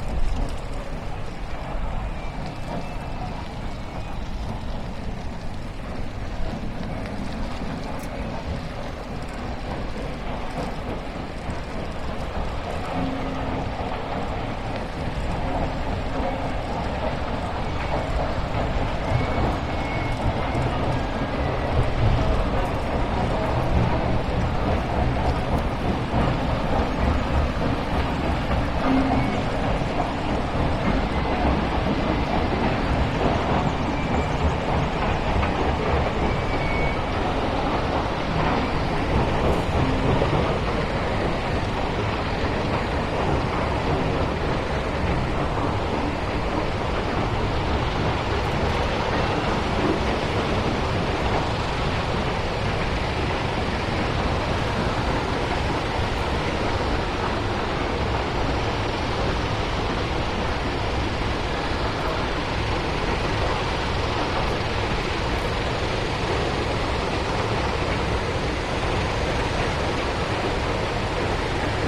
Following the process from stone to pebbles to sand alongside the Marecchia river. What is crushed by a huge lithoclast in the first place is fine grain at last.